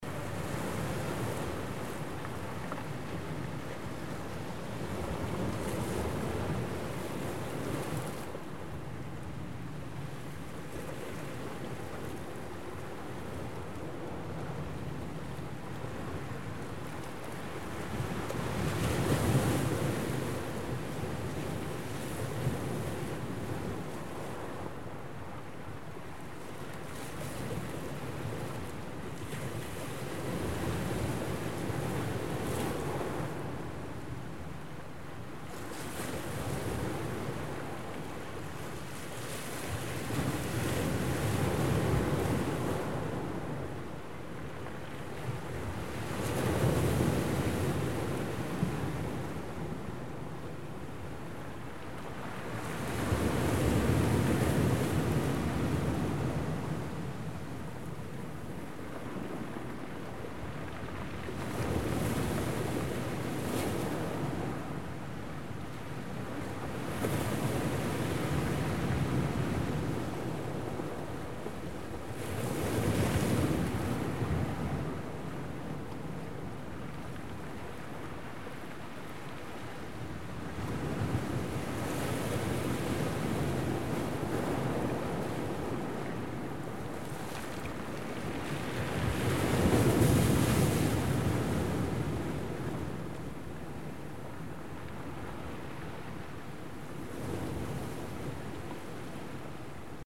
Small hidden rocky cove. The sound of the sea and the sound of the rocks being dragged.